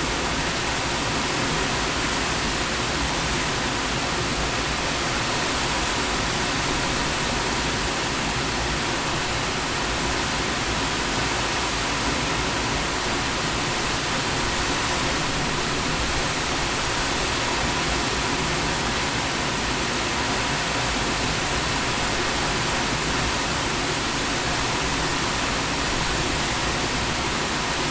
Aber, UK - Aber Falls / Rhaeadr Fawr
Aber Falls / Rhaeadr Fawr waterfall, recorded using a Zoom H4n recorder and Rode wind muff and tripod.
Llanfairfechan, UK, 26 April